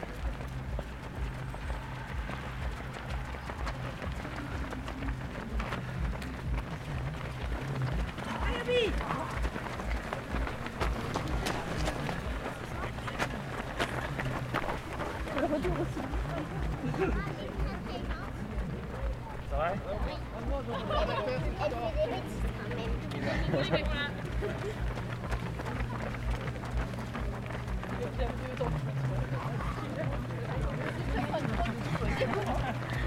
{"title": "80 rue de Vars - Course à pied", "date": "2022-09-04 09:30:00", "description": "Le passage des 2500 engagés des 10km du lac, course à pied organisée par l'ASA Aix-les-bains depuis de nombreuses années. à 1000m du départ.", "latitude": "45.69", "longitude": "5.90", "altitude": "265", "timezone": "Europe/Paris"}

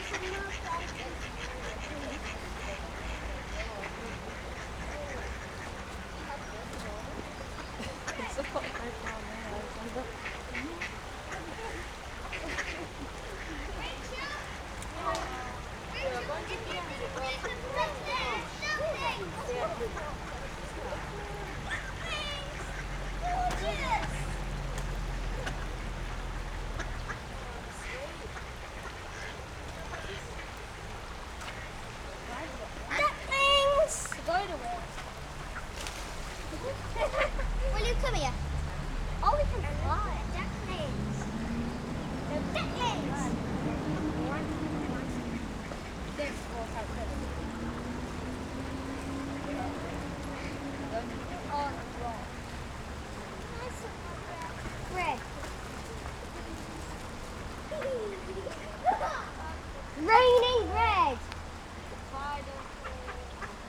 {"title": "Walking Holme Feeding The Ducks", "date": "2011-04-20 13:05:00", "description": "Kids feeding bread to the ducks and ducklings.", "latitude": "53.57", "longitude": "-1.79", "altitude": "147", "timezone": "Europe/London"}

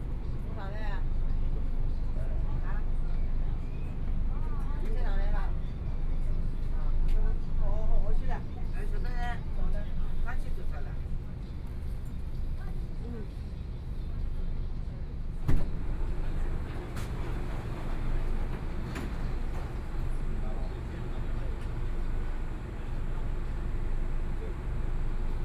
{"title": "Yangpu District, Shanghai - Line 8 (Shanghai Metro)", "date": "2013-11-26 11:15:00", "description": "from Siping Road station to Huangxing Road station, erhu, Binaural recording, Zoom H6+ Soundman OKM II", "latitude": "31.28", "longitude": "121.52", "altitude": "8", "timezone": "Asia/Shanghai"}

